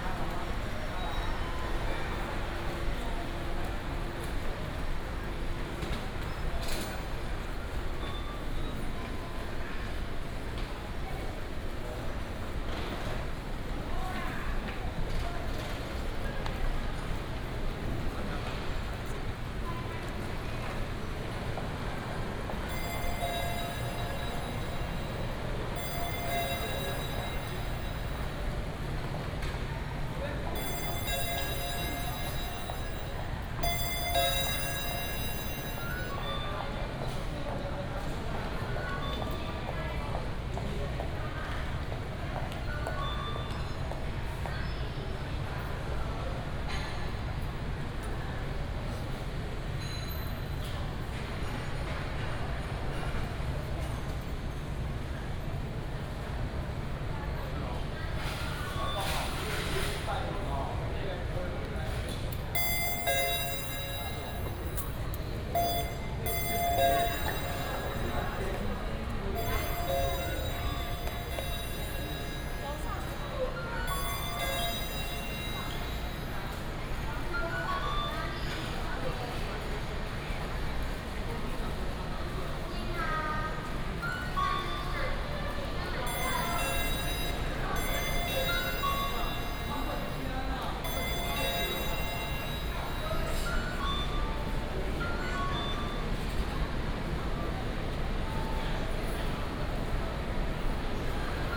{"title": "Kaohsiung Station - At the train station hall", "date": "2018-03-30 08:52:00", "description": "At the train station hall, Ticket counter sound, Convenience store sound", "latitude": "22.64", "longitude": "120.30", "altitude": "12", "timezone": "Asia/Taipei"}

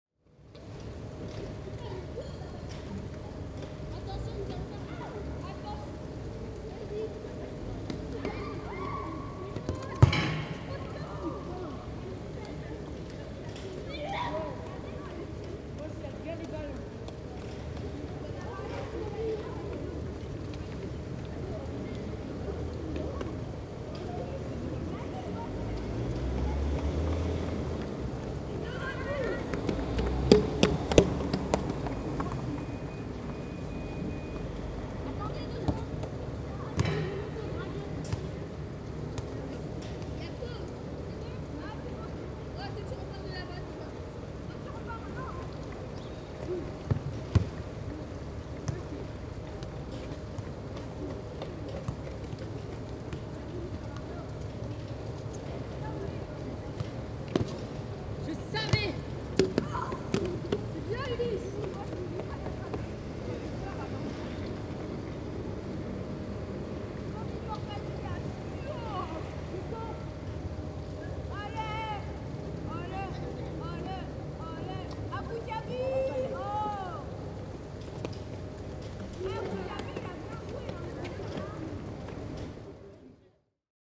{"date": "2010-09-10 09:00:00", "description": "Kids playing soccer at Place Stravinsky, Paris.", "latitude": "48.86", "longitude": "2.35", "altitude": "49", "timezone": "Europe/Paris"}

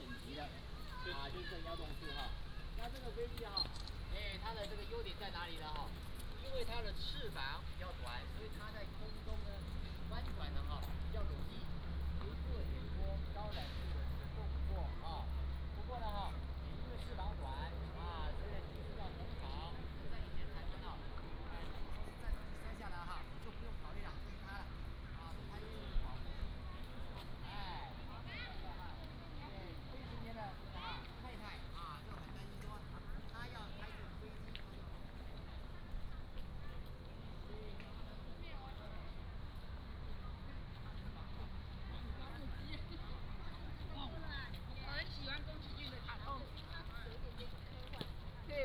{"title": "乳山遊客中心, Kinmen County - in the Visitor Center", "date": "2014-11-02 15:31:00", "description": "Visitor Center, Tourists", "latitude": "24.44", "longitude": "118.35", "altitude": "44", "timezone": "Asia/Taipei"}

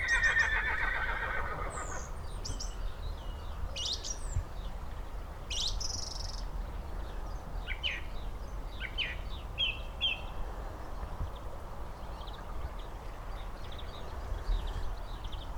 {"title": "Barr Lane, Chickerell", "date": "2011-02-27 12:41:00", "description": "spring, bird singing, distant horse and other animals.", "latitude": "50.63", "longitude": "-2.51", "altitude": "18", "timezone": "Europe/London"}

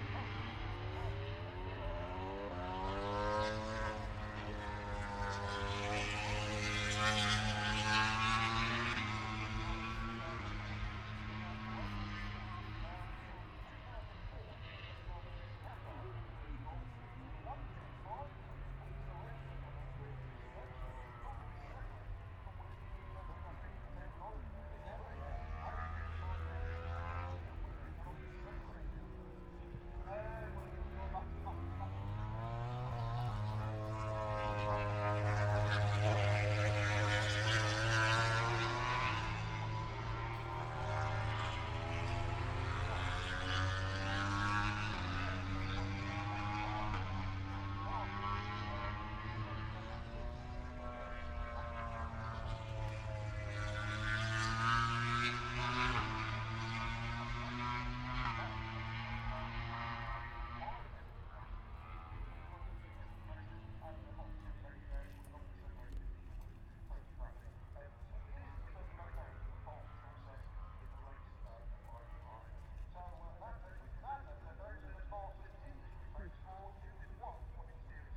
{"title": "Silverstone Circuit, Towcester, UK - British Motorcycle Grand Prix 2017 ... moto grand prix ...", "date": "2017-08-26 13:30:00", "description": "moto grand prix ... free practice four ... Becketts Corner ... open lavaliers clipped to a chair seat ... all sorts of background noise from helicopters to commentary ... needless to say it's a wee bit noisy ...", "latitude": "52.07", "longitude": "-1.01", "altitude": "156", "timezone": "Europe/London"}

